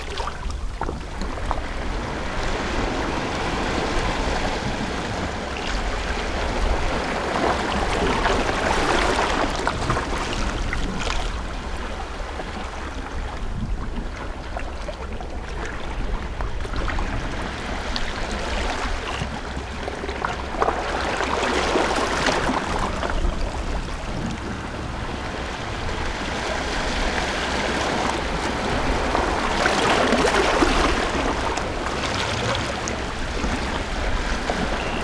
tuman, Keelung - Waves
waves, Sony PCM D50